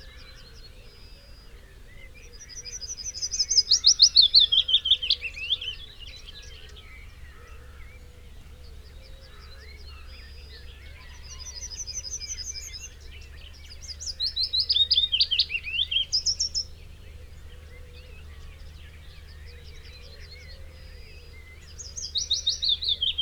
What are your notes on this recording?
willow warbler song soundscape ... Luhd PM-01 binaural mics in binaural dummy head on tripod to Olympus LS 14 ... bird calls ... song ... from ... yellowhammer ... whitethroat ... pheasant ... blackbird ... chaffinch ... song thrush ... crow ... wood pigeon ... background noise ...